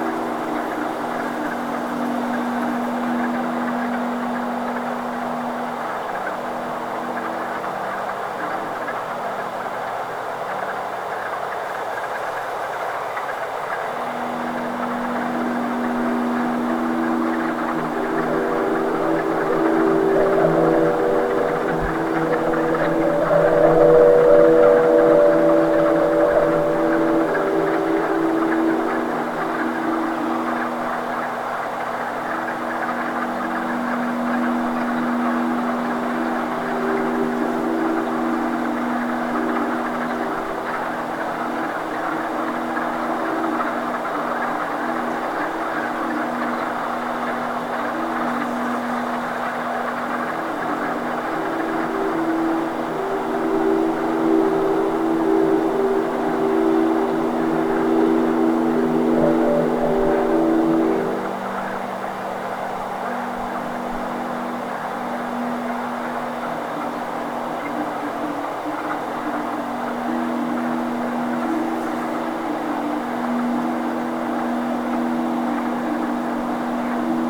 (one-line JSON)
{"title": "Stockbridge, VT, USA - woodfrogs&wind", "description": "through an open window, a cool evening breeze plays a ukulele as a choir of woodfrogs sing along.", "latitude": "43.71", "longitude": "-72.73", "altitude": "476", "timezone": "Europe/Berlin"}